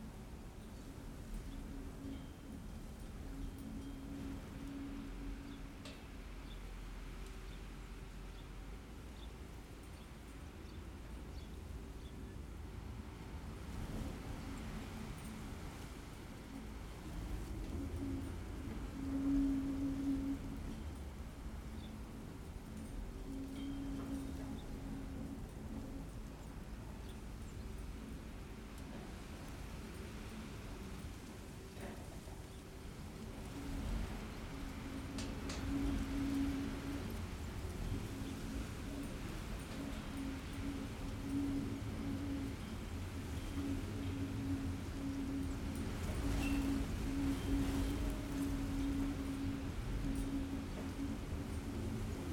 Troon, Camborne, Cornwall, UK - The Wind and the Gate
Windy dry day. A recording of the wind in hedgerows and passing through a gate. DPA4060 microphones, Sound Devices Mixpre-D and Tascam DR100.